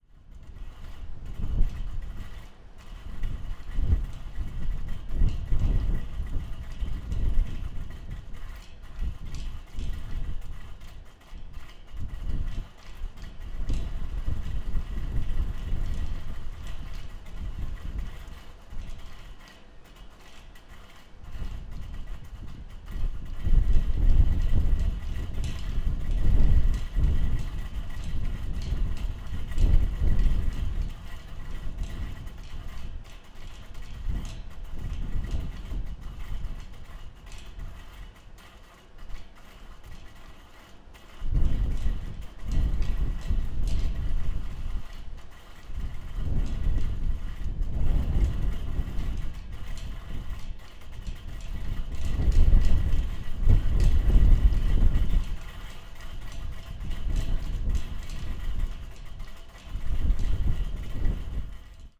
outskirts of Camacha - revolving chimney
clatter of a revolving chimney on a roof of a concrete building
Camacha, Portugal, May 2015